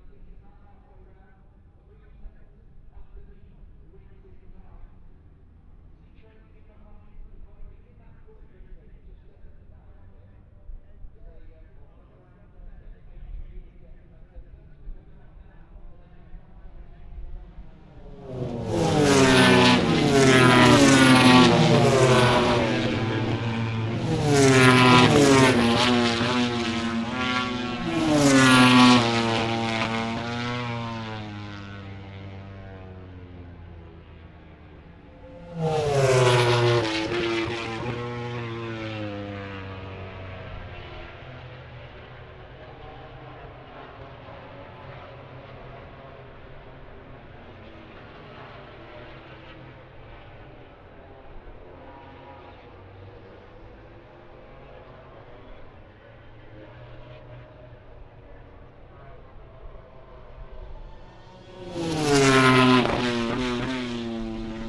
moto grand prix qualifying one ... wellington straight ... olympus ls 14 integral mics ...
England, United Kingdom, 28 August